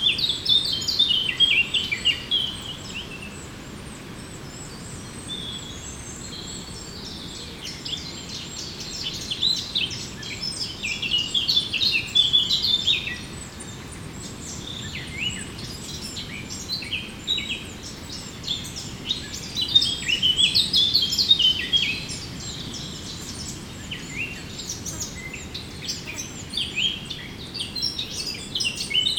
Walking threw the woods, the Eurasian Blackcap singing. 1:20 mn, I'm detected and one of the birds gives an alarm signal. Only the Common Chiffchaff is continuing, but quickly the territorial Eurasian Blackcap is going back to the elevated tree.